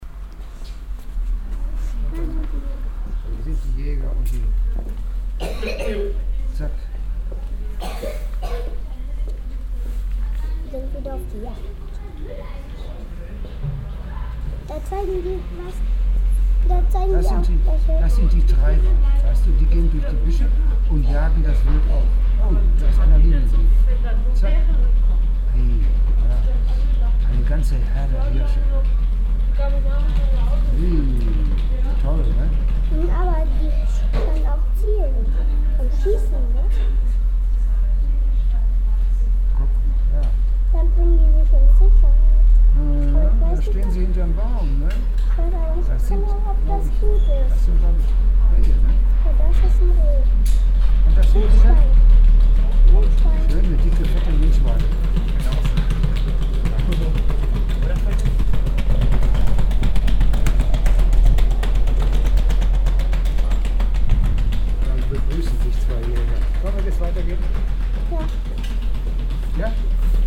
grandpa and grandchild talking in front of a weapon store in a narrow cobble stone road
soundmap nrw - social ambiences and topographic field recordings
Lippstadt, Germany